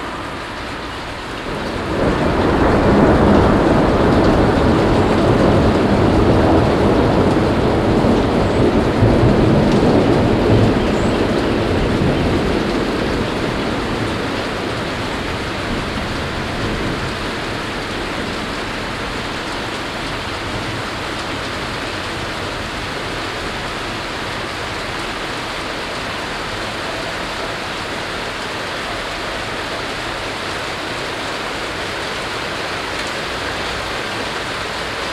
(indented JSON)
{
  "title": "Oberkassel, Düsseldorf, Deutschland - Düsseldorf, under rhine bridge, April hailstorm",
  "date": "2012-04-19 10:16:00",
  "description": "Standing under a part of a rhine bridge at an april early afternoon. The sound of a hailstorm and some passing by cars in the distance.\nsoundmap nrw - topographic field recordings and social ambiences",
  "latitude": "51.23",
  "longitude": "6.76",
  "altitude": "31",
  "timezone": "Europe/Berlin"
}